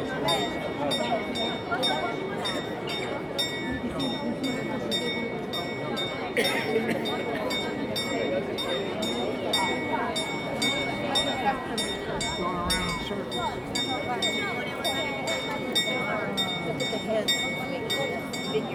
Town Hall - Town Hall Bells
Bells at 1 pm on a Saturday